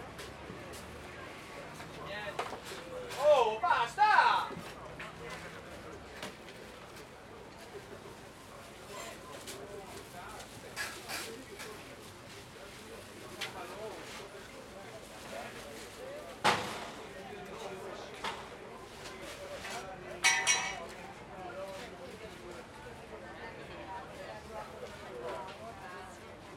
Area adibita a mercato giornaliero dopo il terremoto del 2009. In precedenza era un’area militare adibita ad esercitazioni per automezzi militari.
L'Aquila AQ, Italy, 22 May 2017, ~13:00